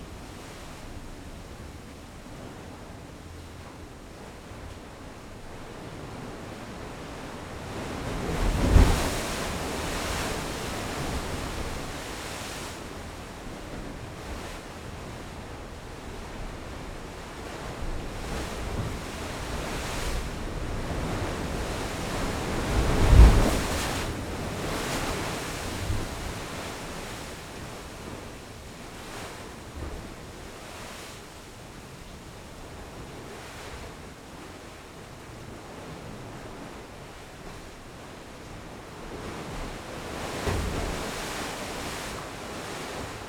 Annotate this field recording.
This recording was made in a place called Las Puntas, just in the entrance of the smallest hostel in the world. There we can find a “bufadero”. Is a hole in the volcanic ground throw which the wind, pushed by the waves of the ocean, blows.